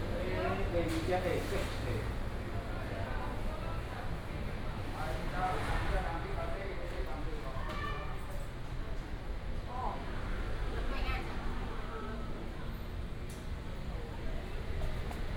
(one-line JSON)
{"title": "Chenggong Rd., Central Dist., Taichung City - Walking in the old market", "date": "2017-03-22 14:33:00", "description": "Walking in the old market", "latitude": "24.15", "longitude": "120.68", "altitude": "98", "timezone": "Asia/Taipei"}